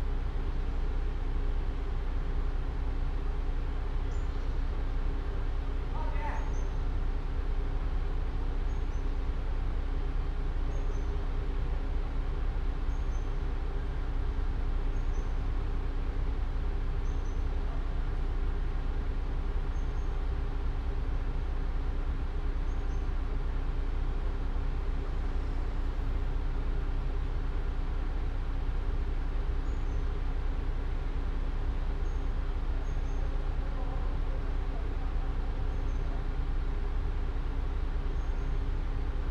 from/behind window, Mladinska, Maribor, Slovenia - from/behind window
hydraulic lift and workers - coordinating the action of lifting up big glass wall